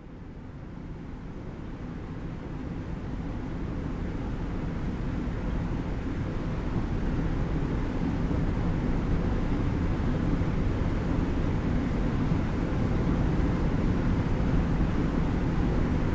Pačkėnai, Lithuania, water pipe
soothing drone of water pipe